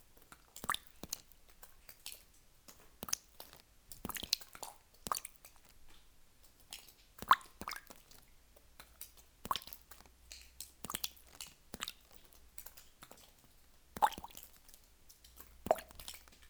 Flumet, France - Underground slate quarry
A simple sound of drops into an underground slate quarry, with a small sizzle sound when water reflux into calcite concretion.
8 June, ~18:00